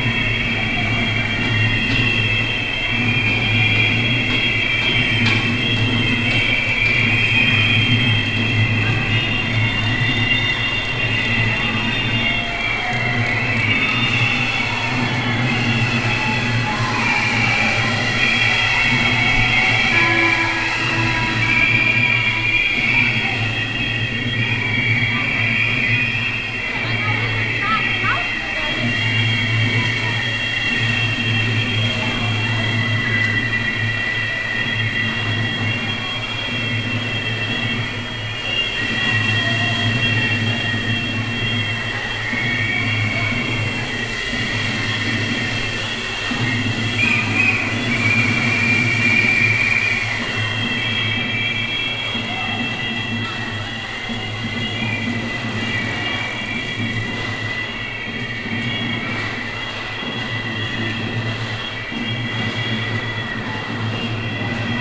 cologne, tunnel, trankgasse - cologne, tunnel, trankgasse, demonstration parade of kindergarden caretaker
in the tunnel - demonstration parade of kindergarden care taker
soundmap d: social ambiences/ listen to the people in & outdoor topographic field recordings
19 June 2009